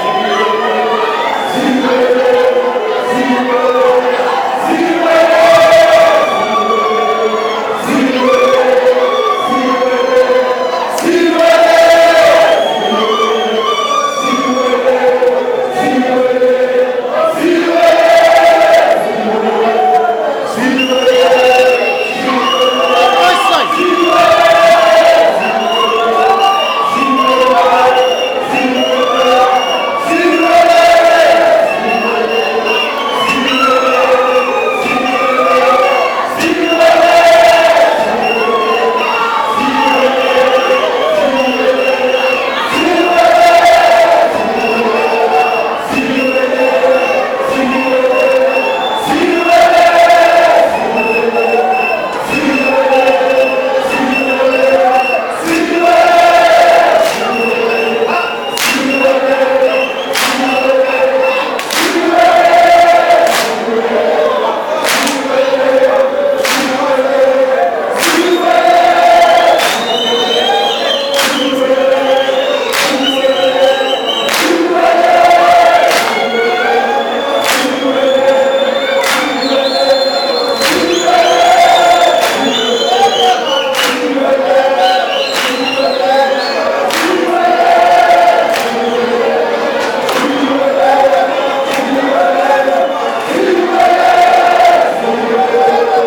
Free State Stadium Bloemfontein, Bloemfontein Celtic (Siwelele) fans sing
Bloemfontein Celtic (Siwelele) football supporters singing in Stadium